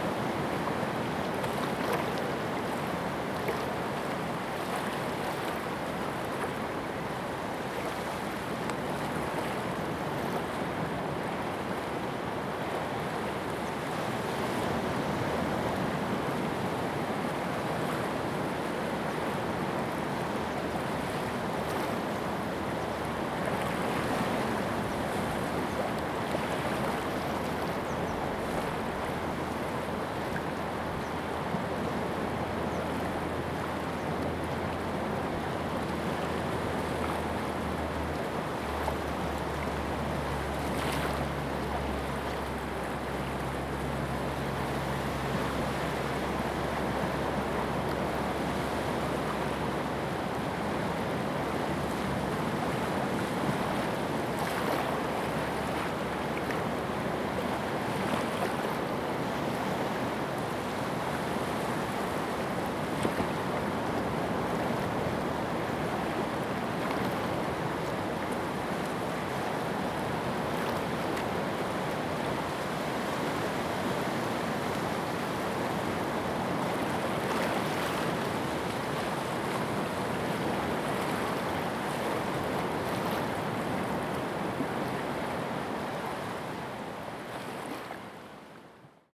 石門區德茂里, New Taipei City - the waves
at the seaside, Sound of the waves
Zoom H2n MS+XY
New Taipei City, Taiwan, 17 April